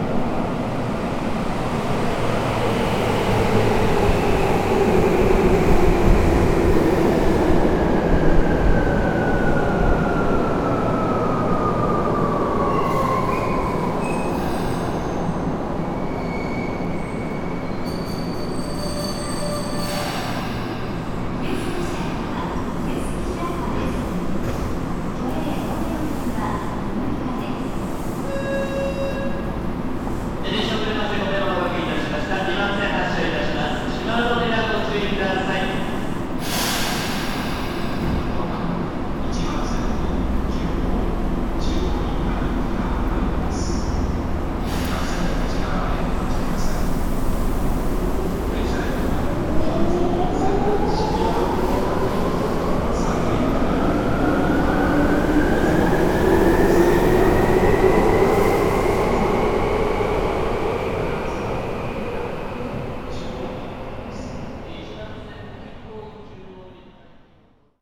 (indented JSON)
{
  "title": "tokyo - kiyosumishirakawa - train station",
  "date": "2010-07-26 10:09:00",
  "description": "at the train station - anouncements, signs, train driving in\ninternational city scapes - topographic field recordings",
  "latitude": "35.68",
  "longitude": "139.80",
  "altitude": "6",
  "timezone": "Asia/Tokyo"
}